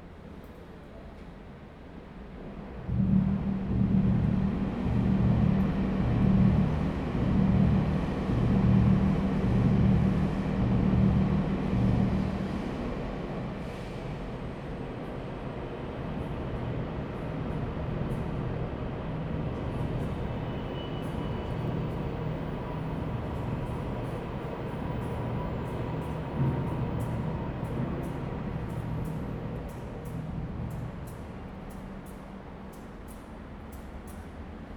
Sec., Zhangmei Rd., Changhua City - underground tunnel
underground tunnel, The train runs through, Traffic sound
Zoom H2n MS+XY
Changhua County, Taiwan, February 2017